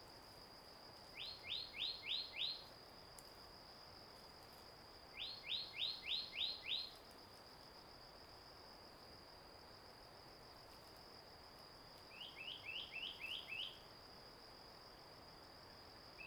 early morning in the mountains, Bird song, Insect noise, Stream sound
Zoom H2n MS+XY